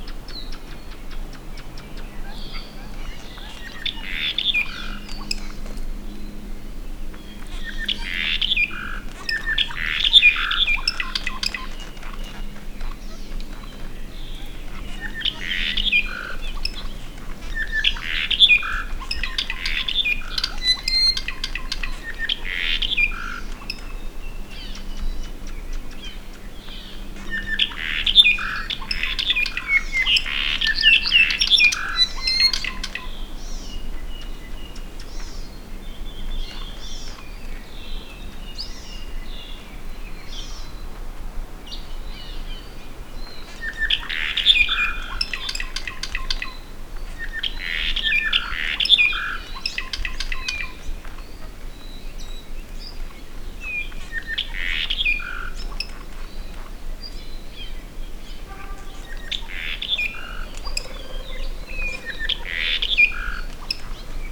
Hosmer Grove, Haleakala NP, Maui
Apapane (bird endemic to Hawaii) singing in tops of trees.